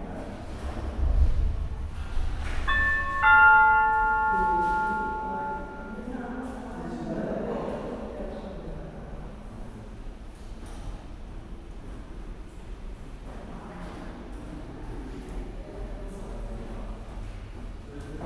Diesterwegstraße, Berlin, Germany - Bezirksambt Pankow: waiting to register my apartment
Waiting to register one's address in the local council offices can take a while. Here you sit on worn metal chairs in a very long, spartan corridor with a ceiling so high binoculars are needed to see it properly. People walk or shuffle up and down, doors open and close mysteriously with a thump. The sound reverberates into the building's depth. You are hushed by the atmosphere. Time passes slowly. Hope arrives as a loud, but friendly, electronic 'ding dong' that announces the next appointment number displayed in red on a bright white screen high above. My moment is here. Everything goes very smoothly. I am now officially in Berlin with a bang up to date registration. Something I should have done 8 years ago.